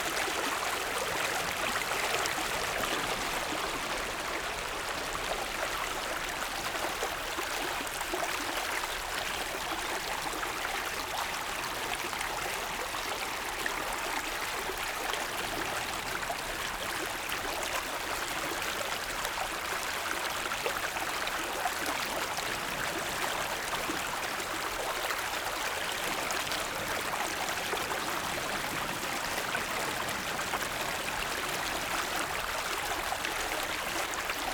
Liukuaicuo, 淡水區, New Taipei City - Stream sound
Aircraft flying through, Sound of the waves
Zoom H6 XY
New Taipei City, Tamsui District, 16 April, ~07:00